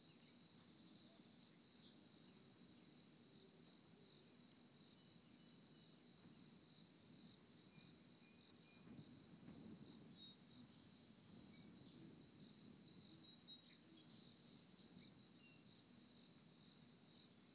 SMIP RANCH, D.R.A.P., San Mateo County, CA, USA - Waking with the Birds
Early morning bird activity between row of pines and the artist's barn.
Redwood City, CA, USA, 9 June 2014